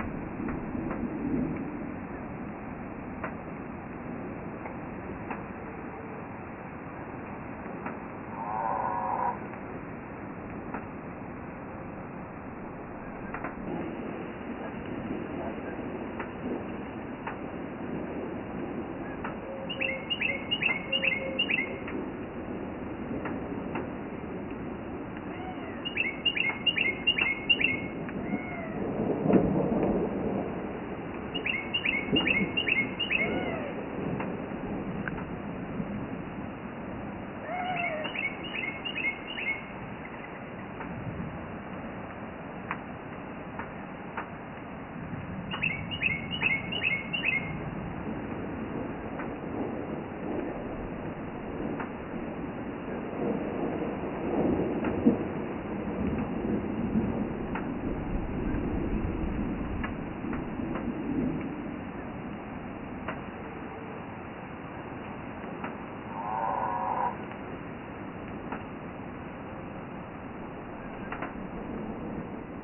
Quiet morning
distant storm approaches
storm arrives
rain on metal roof
storm moves away
FL, USA, 20 December 2013, 15:30